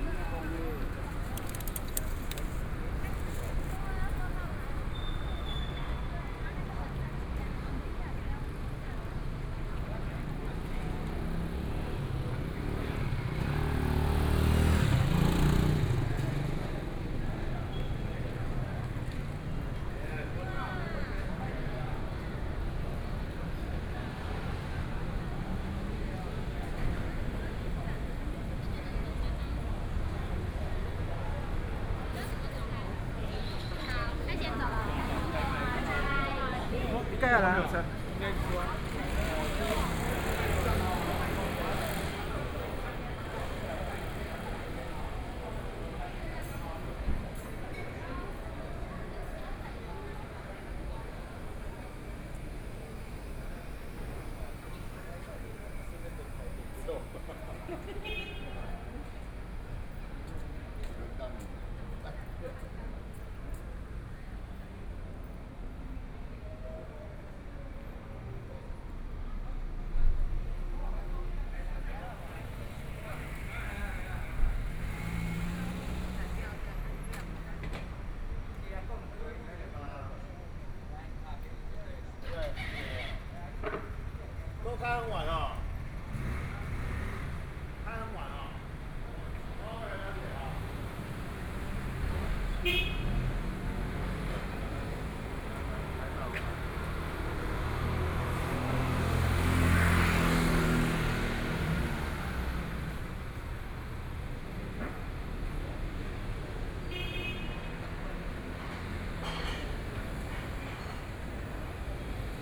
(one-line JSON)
{"title": "中山區正義里, Taipei City - Walking in the streets at night", "date": "2014-02-28 21:35:00", "description": "walking in the Street, Through a variety of different shops\nPlease turn up the volume a little\nBinaural recordings, Sony PCM D100 + Soundman OKM II", "latitude": "25.05", "longitude": "121.52", "timezone": "Asia/Taipei"}